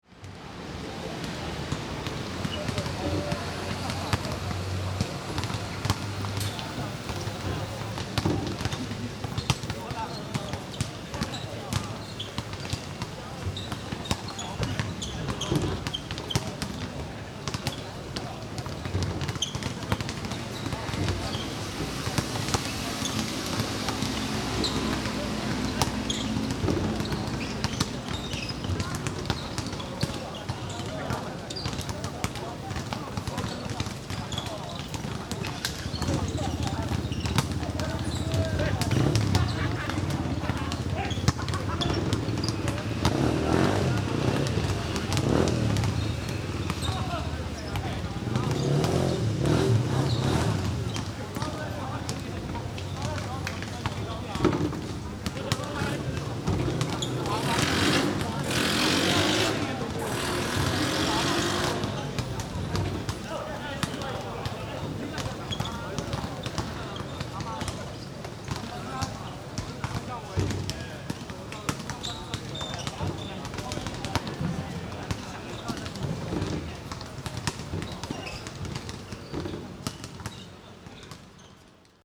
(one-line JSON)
{"title": "公一公園, Yonghe Dist., New Taipei City - Play basketball", "date": "2011-06-30 19:32:00", "description": "Play basketball, In Park\nSony Hi-MD MZ-RH1 +Sony ECM-MS907", "latitude": "25.01", "longitude": "121.50", "altitude": "14", "timezone": "Asia/Taipei"}